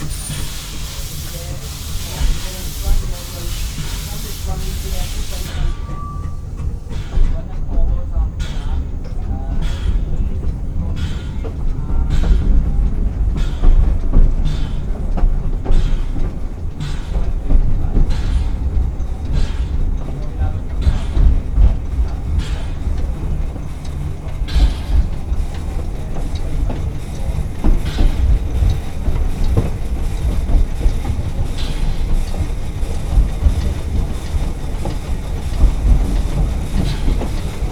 Steamers Descent from Torpantau, Merthyr Tydfil, Wales, UK - Steam Train
A narrow guage steam train makes the easy descent from the highest point on this railway in the Brecon Beacons National Park. The recorder and two mics are on the floor of the guard's van and the shotgun pointing along the length of the short train through an open doorway. There are glimpses of the Welsh accent and sounds of the train.
MixPre 3 with 2 x Rode NT5s + Rode NTG3. I always use omni capsules on the NT5s.